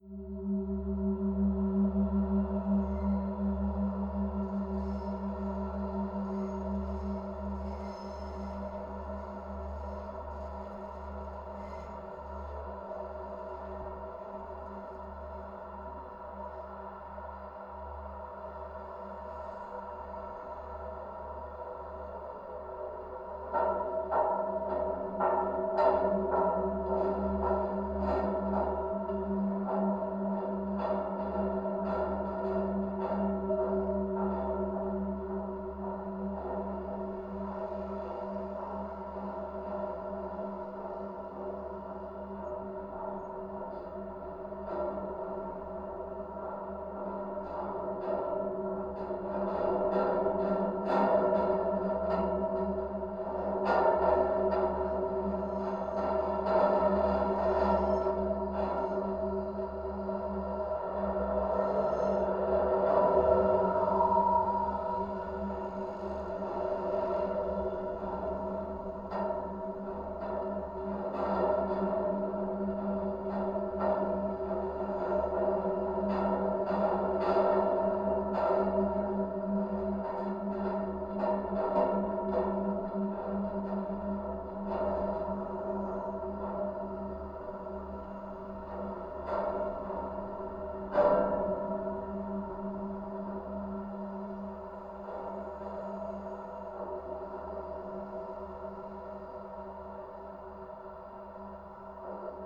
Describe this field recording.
metal pedestrian bridge from tram to metro station, above the motorway, (Sony PCM D50, DIY contact mics)